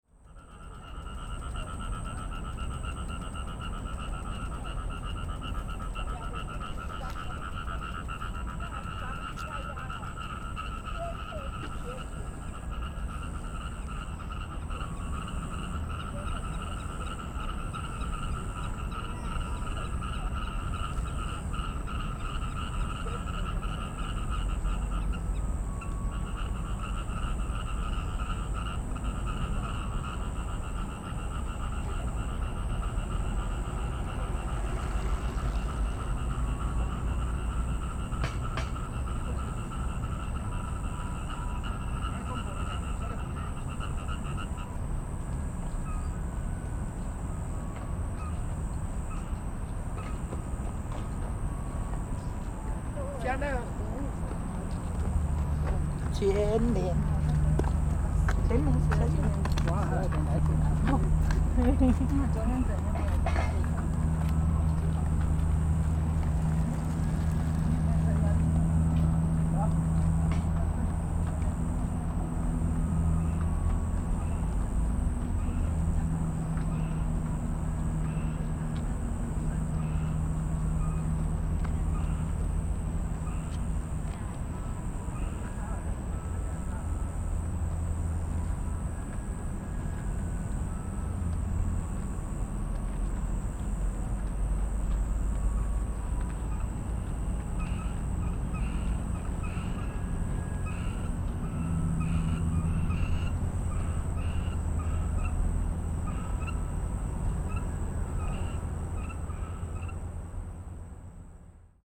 Tamsui River, New Taipei City, Taiwan - Frog calls
Frog calls, Beside the river, traffic sound
Sony PCM D50